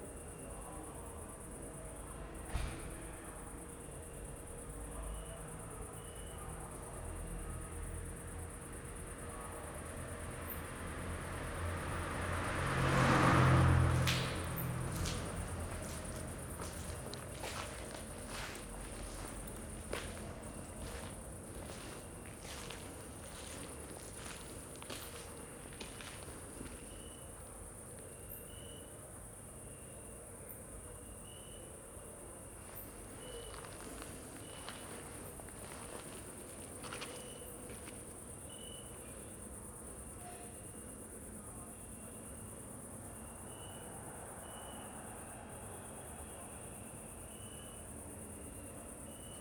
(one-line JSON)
{"title": "Maribor, Mestni park - evening walk in park", "date": "2012-08-28 21:50:00", "description": "walk through dark Mestni park, from this spot to the backyard of Mladinska 2.\n(PCM D-50, DPA4060)", "latitude": "46.56", "longitude": "15.65", "altitude": "284", "timezone": "Europe/Ljubljana"}